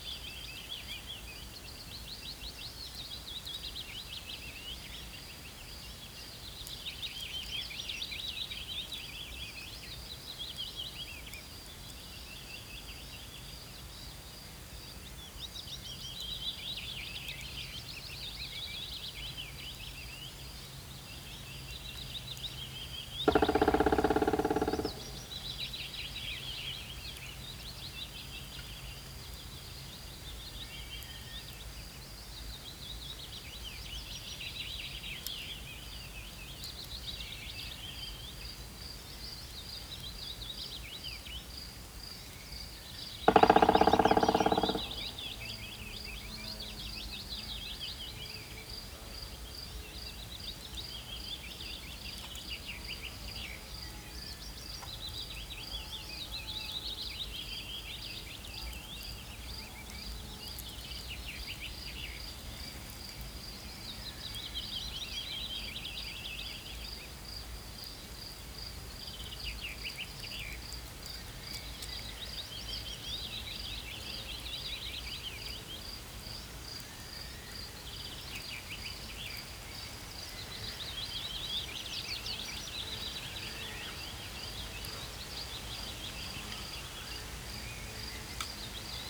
Vogelsang, Zehdenick, Germany - Drones zing and a Black Woodpecker hammers at the top of a dead tree
Visiting the abandoned exSoviet base of Vogelsang in 2020. My first trip outside Berlin during the Covid-19 pandemic. The train journey was better than expected. It was not so crowded and everyone wore a mask. Otherwise as normal. Sadly returning traffic in the city has brought back the pollution, so it was good to be in the forest and breath clean air again. Good weather too, pleasantly warm and a fresh breeze that constantly fluttered the leaves. Others were here too, flying drones that sound like overgrown mosquitos or just wandering.
There seemed to be a greater variety of wildlife than usual. Maybe they hav been less disturbed during the corona lockdown. For the first time I saw wild boar, a large tusked male with a much smaller female. These are big animals, but they moved away quickly after seeing us. A black woodpecker - the largest of the family - was another first. It's drumming on a dead tree was the loudest sound in the forest.
29 May 2020, 16:31